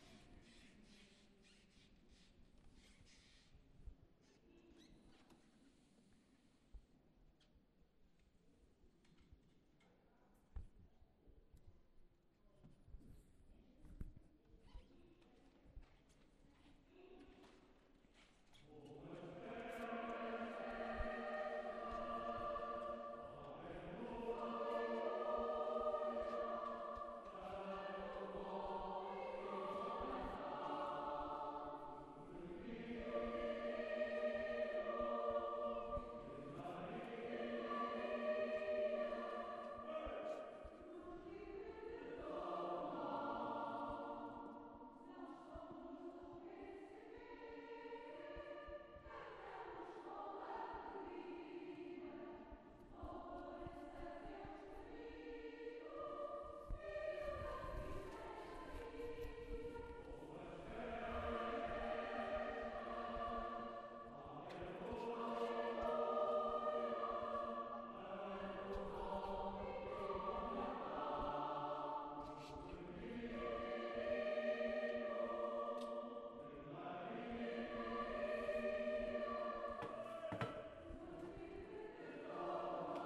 Lisboa, Igreja de Loreto Christmas
Christmas choir concert part 2